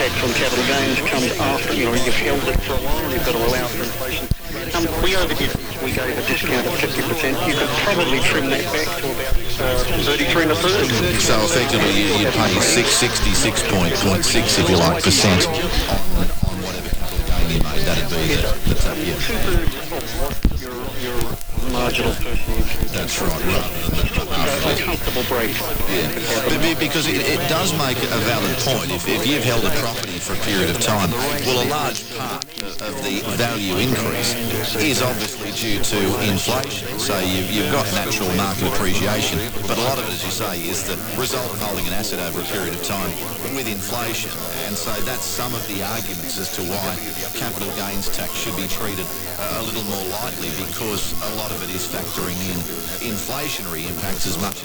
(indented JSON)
{
  "title": "Sydney Olympic Park NSW, Australien - ArmoryRadio",
  "date": "2015-10-26 11:00:00",
  "description": "Receiving a mix of radio stations in an old unused electricity cable and light switch by using an induction coil. Newington Armory outside the small building near building 20, a former storage for gun powder and other explosive stuff from the army.",
  "latitude": "-33.83",
  "longitude": "151.06",
  "altitude": "1",
  "timezone": "Australia/Sydney"
}